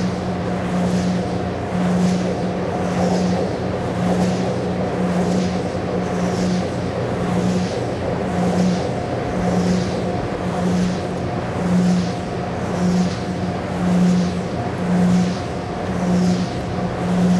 Windmill with strong wind, Zoom H6 and Rode NTG4
France métropolitaine, France, 28 November 2021